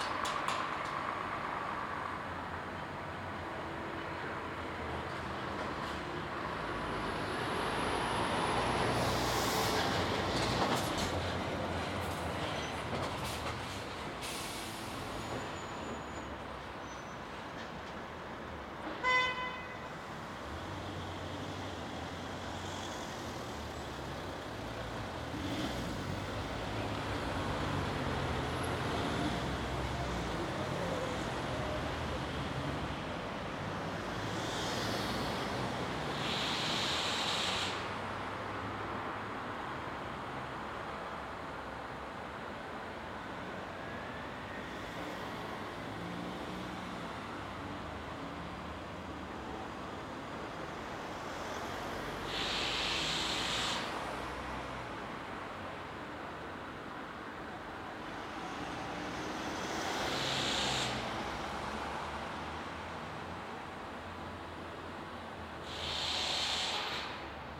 Chatelaine over the bridge, Chemin des Sports, Genève, Suisse - Train in Châtelaine
I am in the middle of the bridge. a train passes under my feet. I hear the construction workers. It is near noon and cars are driving across the bridge.
Rec with Zoom H2n an rework.
12 January 2021, 11:46